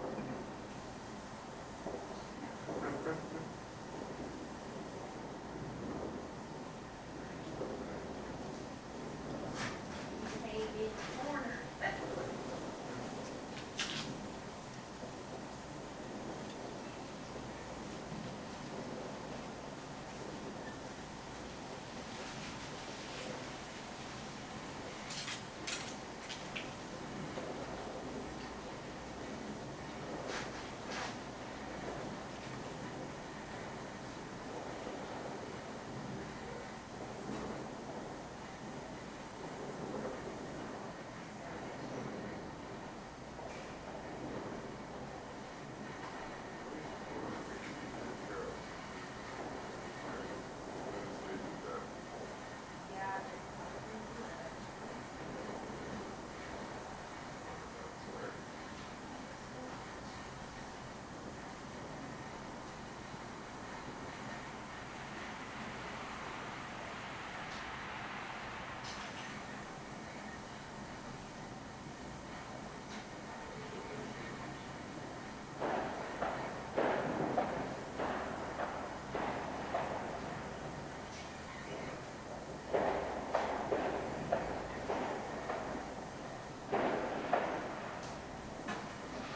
No., Fuqun Street, Xiangshan District, Hsinchu City, Taiwan - Chinese New Years Eve
Fireworks are heard from around the Fuqun Gardens community, as midnight approaches. Through the windows, Thello can be heard complaining about having her surgical site cleaned. Recorded from the front porch. Stereo mics (Audiotalaia-Primo ECM 172), recorded via Olympus LS-10.